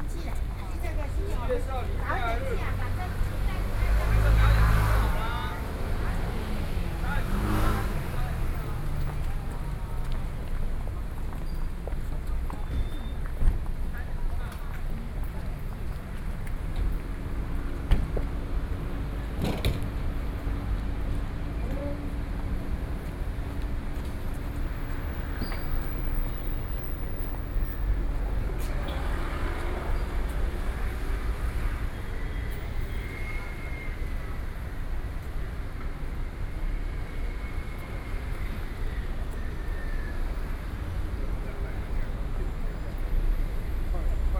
Changde St., Zhongzheng Dist., Taipei City - SoundWalk
Taipei City, Taiwan, 9 October, ~16:00